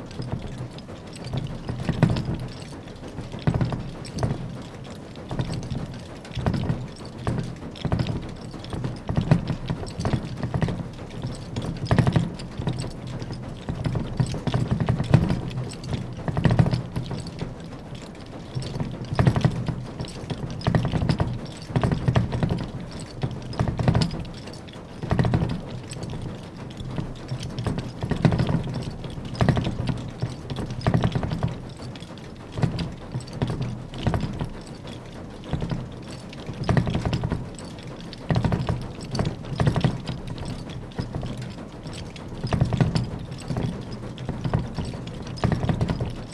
enscherange, rackesmillen, belt drive - enscherange, rackesmillen, flour mixer
At the roof level of the old mill. The outer sound of the flour mixer.
Im Dachgeschoß der Mühle. Eine Aufnahme des Mehlmischersgehäuses.
À l’intérieur du moulin historique, dans une salle au rez-de-chaussée, directement derrière la roue à aubes du moulin. Le son de la courroie qui tourne avec un déséquilibre voulu pour faire fonctionner le mécanisme au premier étage.
23 September 2011, ~21:00